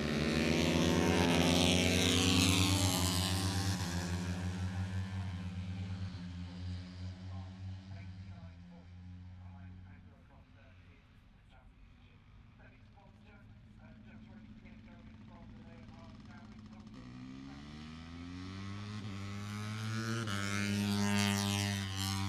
Silverstone Circuit, Towcester, UK - british motorcycle grand prix 2021 ... moto three ...
moto three free practice three ... copse corner ... dap 4060s to Zoom H5 ...
England, United Kingdom